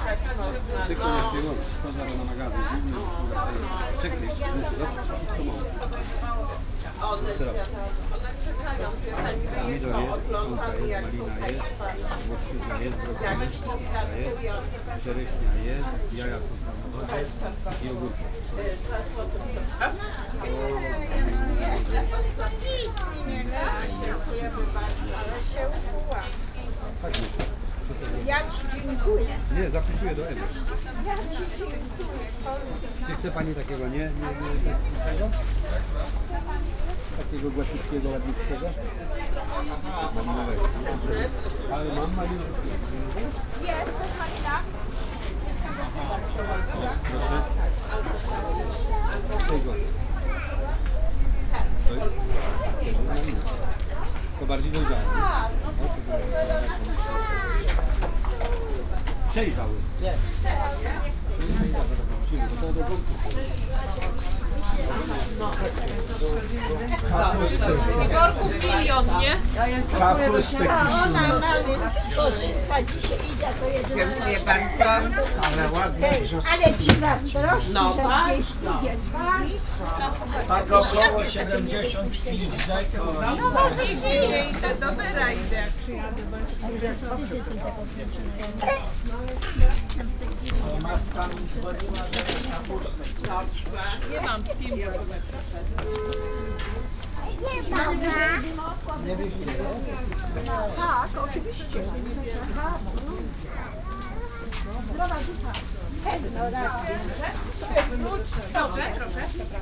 Poznan, Sobieskiego housing estate - produce market
(binaural) walking around stalls. lots of customers shopping on a local market on Saturday morning. vendors touting their goods, joking with customers. you are hearing a lot of people talking with the influence of Poznan dialect. It's especially strong among the elderly. Very distinctive dialect and can be heard basically only in Poznan.
Poznań, Poland, 11 July 2015